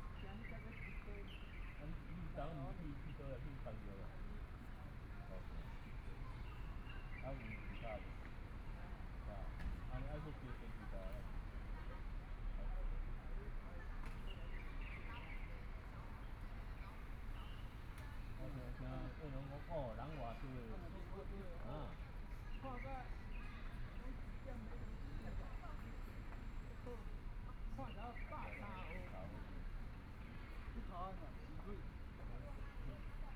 YongZhi Park, Taipei City - chat
Sitting in the park, Traffic Sound, Elderly voice chat, Birds singing
Binaural recordings
Zoom H4n+ Soundman OKM II